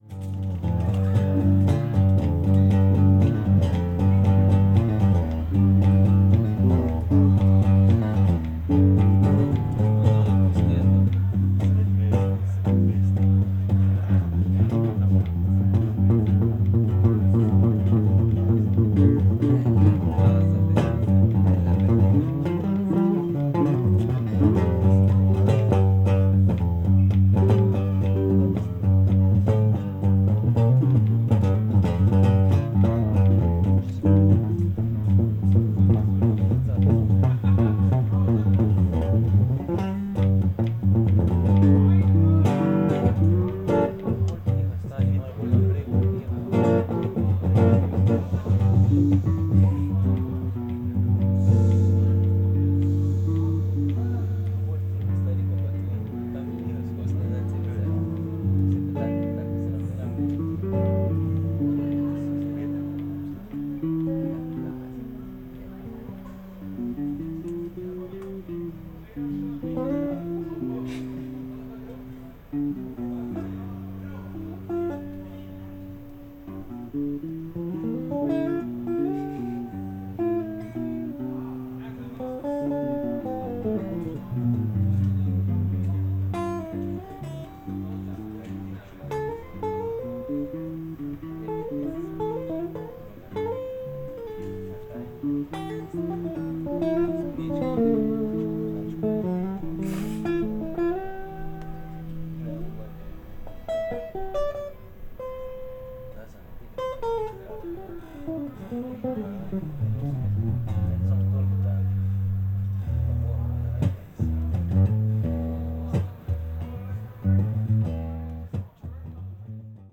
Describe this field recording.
evening at china shop (Čajnašop), the vitual center of polenta festival, Frenk and Matthej rehearsing.